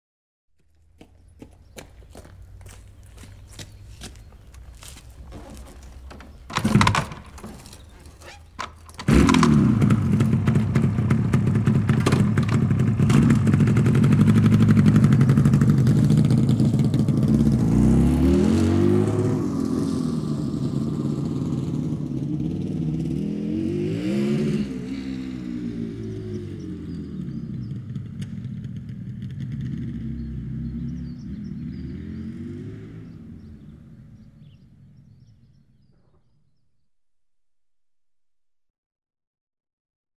At the village parking place nearby a meeting point for biker. A man walks to his machine, sits on it, starts the engine and drives away. The sound resonating in the silent valley.
Kautenbach, Motorrad
Beim Dorfparkplatz nahe einem Treffpunkt für Motorradfahrer. Ein Mann geht zu seiner Maschine, setzt sich darauf, startet den Motor und fährt fort. Das Geräusch klingt im stillen Tal nach.
Kautenbach, motocycles
Sur le grand parking dans le village, à proximité d’un point de rendez-vous pour les motards. Un homme marche vers sa moto, s’assoit dessus, démarre le moteur et part. Le son résonne dans la vallée silencieuse.
Project - Klangraum Our - topographic field recordings, sound objects and social ambiences
Luxembourg, 10 August 2011